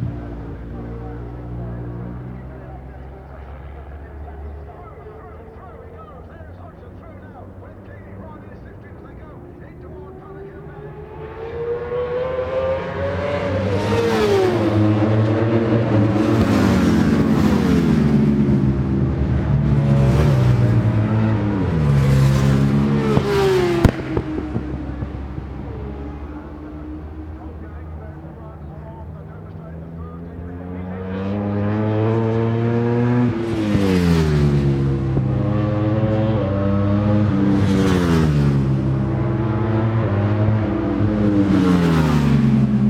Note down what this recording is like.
World Superbikes 2001 ... Qualifying ... part two ... one point stereo mic to minidisk ...